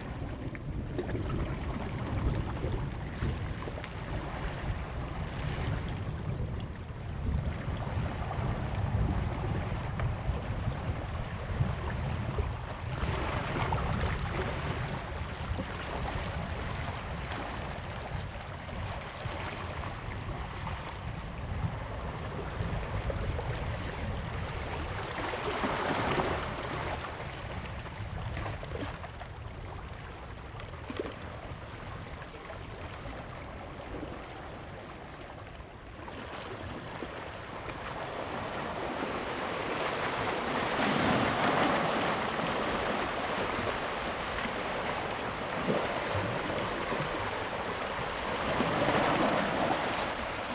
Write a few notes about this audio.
Sound of the waves, Thunder sound, Zoom H2n MS +XY